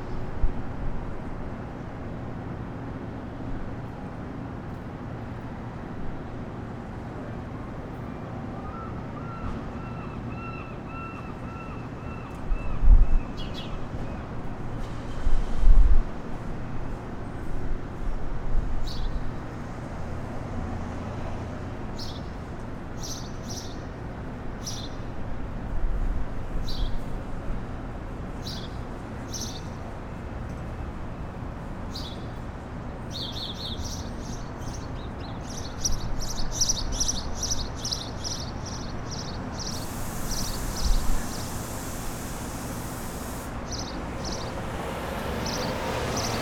Laneway-1965 Main Street, VIVO Media Arts Centre
Dogs barking, birds, occasional traffic